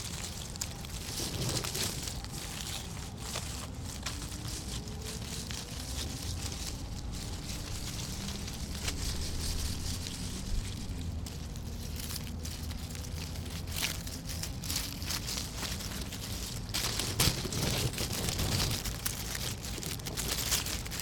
Washington Park, South Doctor Martin Luther King Junior Drive, Chicago, IL, USA - Summer Walk 1
Recorded with Zoom H2. Recording of my interactive soundwalk.